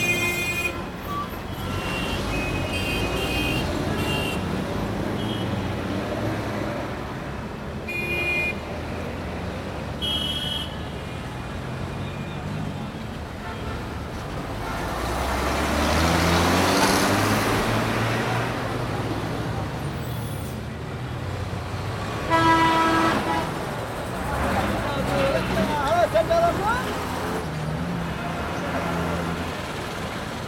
Shaheed Minar, Maidan, Esplanade, Kolkata, West Bengal, Inde - Calcutta - Nehru road
Calcutta - Près de Nehru road
Ambiance urbaine